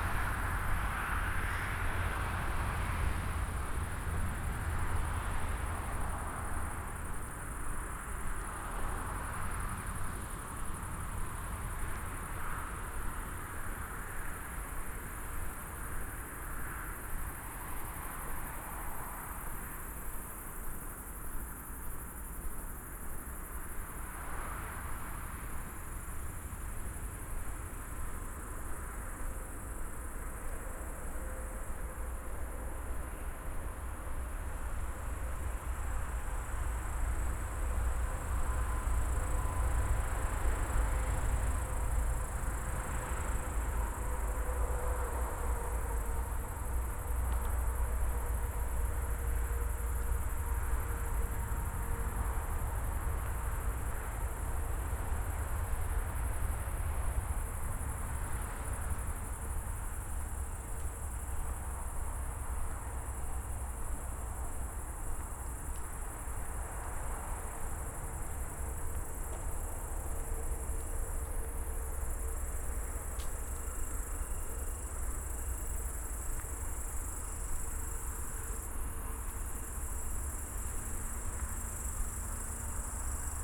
night walk from Berlin Buch Moorlinse to Karow, listening to intense cricket sounds, a S-Bahn train, passing the Autobahn bridge, violent traffic noise, then crickets again, later Italian tree crickets with its low-pitched sounds, then 3 youngsters w/ a boom box, hanging out under a bridge in the dark
(Sony PCM D50, Primo EM172)